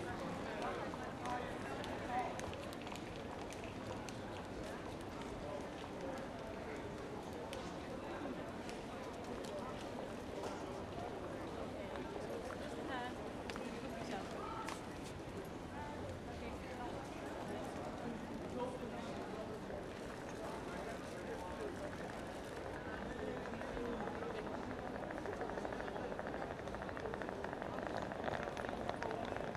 Recorded on a bench next to the escelator